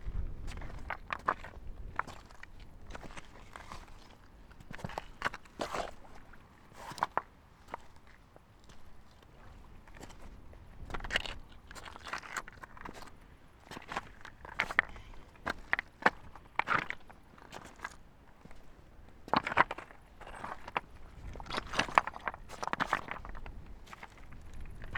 alt reddevitz: strand - the city, the country & me: soundwalk at the beach
the city, the country & me: october 3, 2010